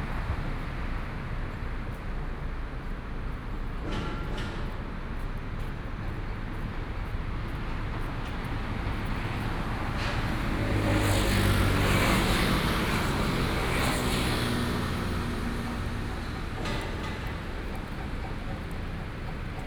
Zhongshan N. Rd., Taipei City - Standing on the roadside
Standing on the roadside, Traffic Sound, Opposite the building under construction
Sony PCM D50+ Soundman OKM II